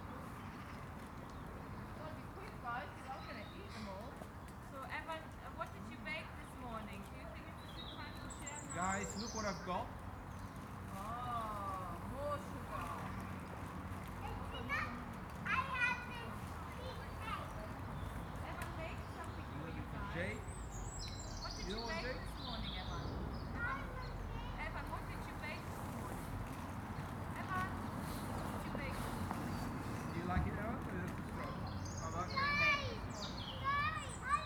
{"title": "Gladstone Park, London - Gladstone Park", "date": "2021-02-27 12:10:00", "description": "Sunny day in Gladstone Park, kids playing", "latitude": "51.56", "longitude": "-0.24", "altitude": "66", "timezone": "Europe/London"}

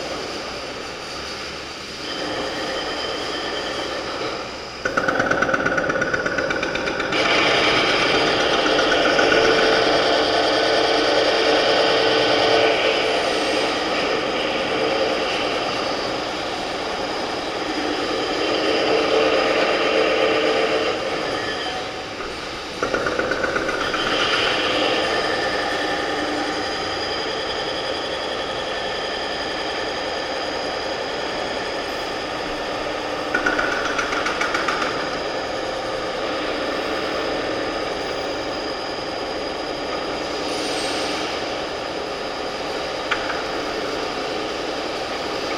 {"title": "EC-1 od strony ul. Tuwima, Lodz", "date": "2011-11-17 14:08:00", "description": "autor/author: Łukasz Cieślak", "latitude": "51.77", "longitude": "19.47", "altitude": "218", "timezone": "Europe/Warsaw"}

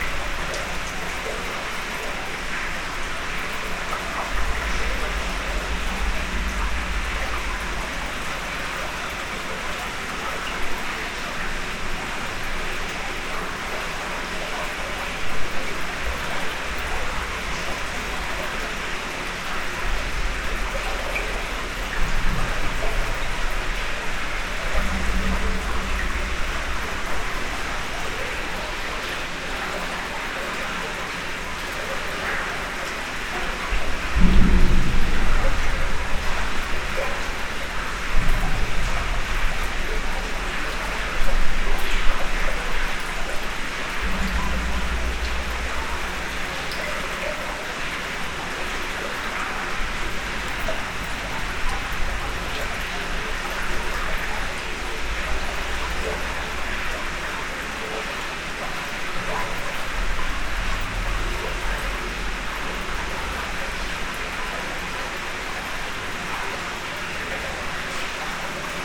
{"title": "Nivelles, Belgium - In the Nivelles sewers", "date": "2017-11-15 08:40:00", "description": "Waiting a few time in the Nivelles sewers. Its very very dirty and theres rats jumping everywhere. Im worried because its quite dangerous.", "latitude": "50.60", "longitude": "4.33", "altitude": "100", "timezone": "Europe/Brussels"}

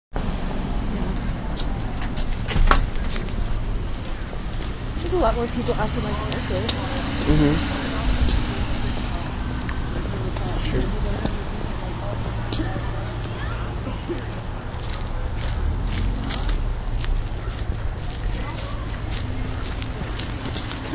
{"date": "2011-01-30 13:03:00", "description": "jefferson circle, armory square, street sounds", "latitude": "43.05", "longitude": "-76.16", "altitude": "118", "timezone": "America/New_York"}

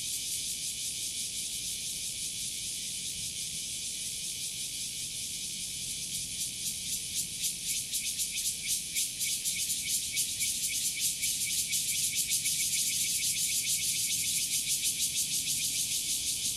{"title": "545台灣南投縣埔里鎮暨南大學, NCNU Puli, Taiwan - Cicadas chirping", "date": "2015-09-02 03:49:00", "description": "Cicadas sounds at the campus of National Chi Nan University.\nDevice: Zoom H2n", "latitude": "23.95", "longitude": "120.93", "altitude": "570", "timezone": "Asia/Taipei"}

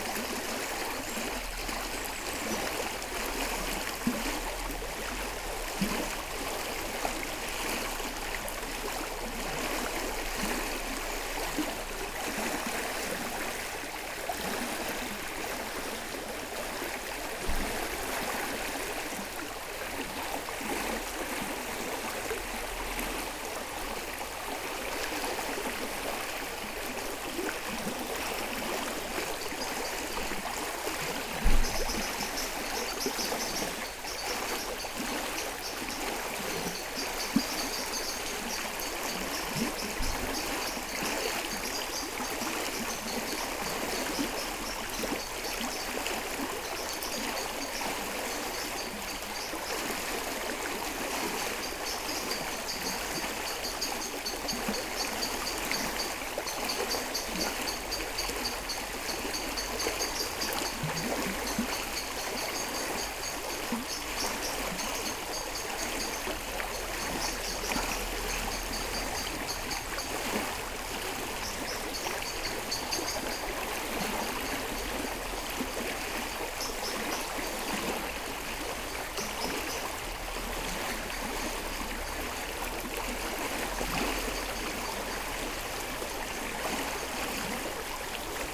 {"title": "Mont-Saint-Guibert, Belgique - Orne river", "date": "2016-05-22 21:10:00", "description": "Sounds of the Orne river, with waves because of the constant rain. A blackbird is fighting another one because it's the mating season.", "latitude": "50.64", "longitude": "4.61", "altitude": "87", "timezone": "Europe/Brussels"}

